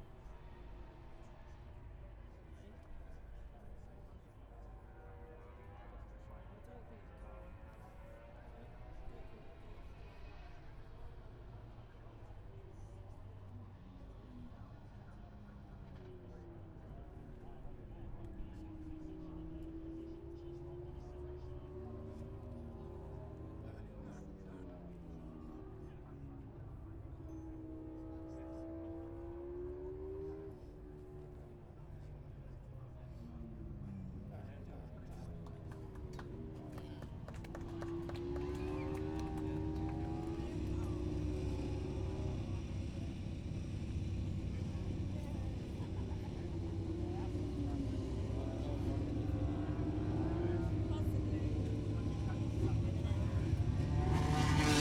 Towcester, UK - british motorcycle grand prix 2022 ... moto grand prix ...
british motorcycle grand prix 2022 ... moto grand prix practice start ... dpa 4060s on t bar on tripod to zoom f6 ...